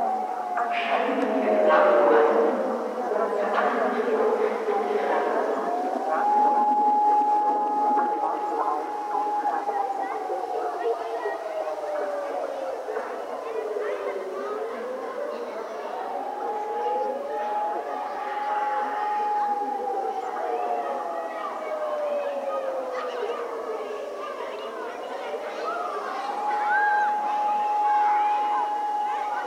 Hofmannstraße, Dresden, Deutschland - Bundesweiter Probealarmtag Sierene und Schulhof mit Kindern
Schulhof mit Kindern
Bundesweiter Probealarm 11:00 Sierene auf Schuldach und andere im Hintergrund